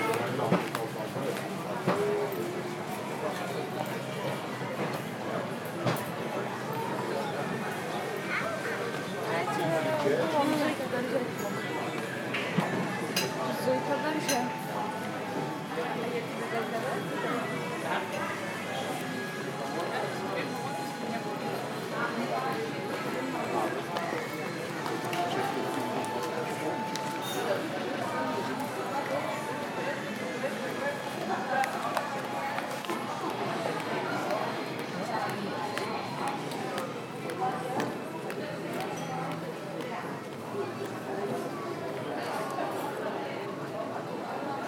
Nida, Lithuania - Walking Past Restaurants
Recordist: Liviu Ispas
Description: Walking past restaurants on a busy day. People talking, eating, restaurant music and cutlery noises. Recorded with ZOOM H2N Handy Recorder.